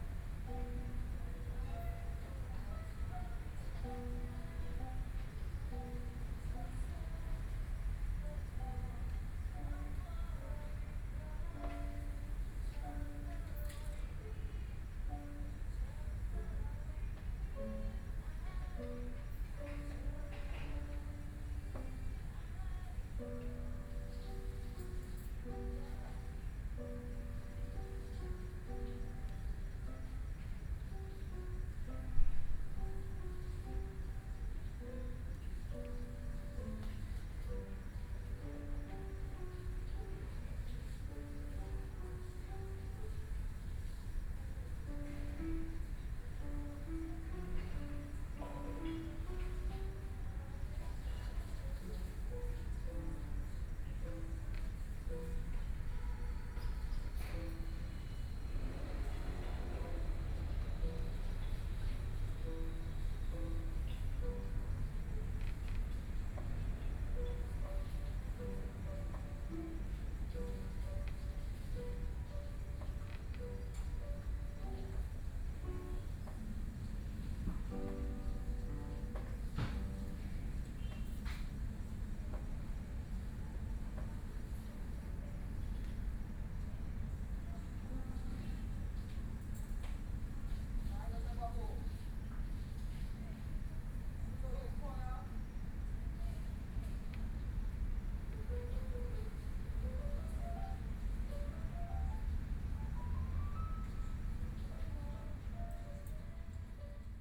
碧湖公園, Taipei City - at night
The park at night, Piano sound, Environmental sounds
Binaural recordings
Taipei City, Taiwan